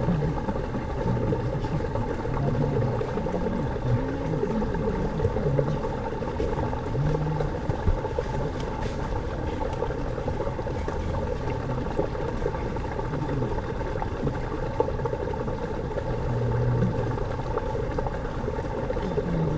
canal, Ginkakuji gardens, Kyoto - water flux
gardens sonority, water flow, drop here and there, people